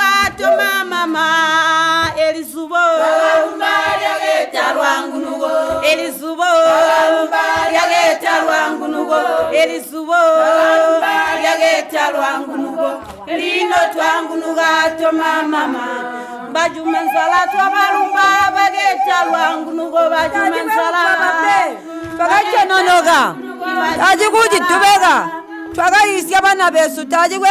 Kariyangwe, Binga, Zimbabwe - Twalumba Zubo, thank you, Zubo...
This recording of the Zubo song was made a month later by Regina Munkuli herself, the community based facilitator of Zubo Trust after training during the radio project "Women documenting women stories" with the rural women of Zubo Trust.
Zubo Trust is a women’s organization in Binga Zimbabwe bringing women together for self-empowerment.